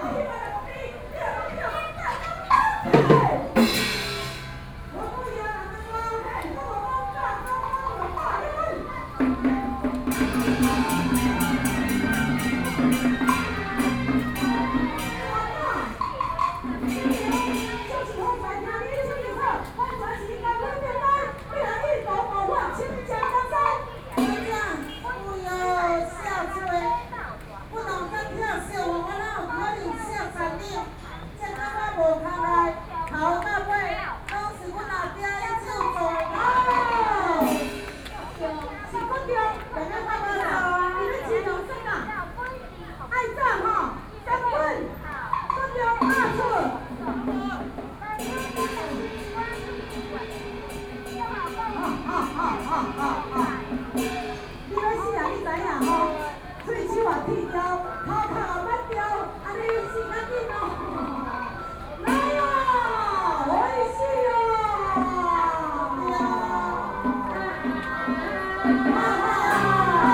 Buddhist Temple, Luzhou - Taiwanese Opera
in the Temple Square, Taiwanese Opera, Binaural recordings, Sony PCM D50 + Soundman OKM II
New Taipei City, Taiwan, 22 October 2013, 16:39